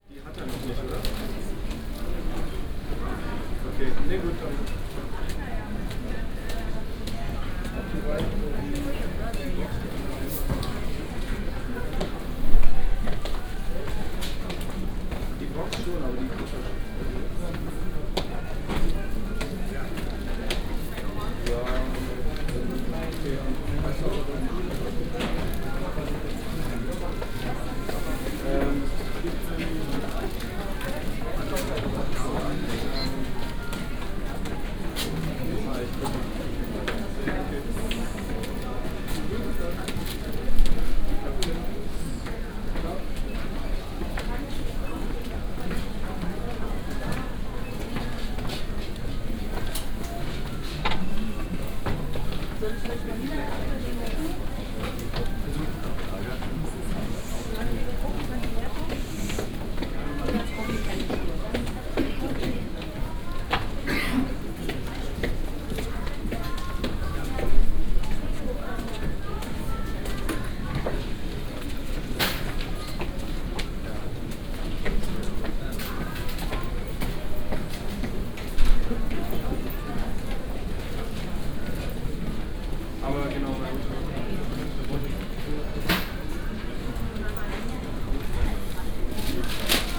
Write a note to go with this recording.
same procedure as every year. beeps and murmer and steps ons stairs, christmas bookstore ambience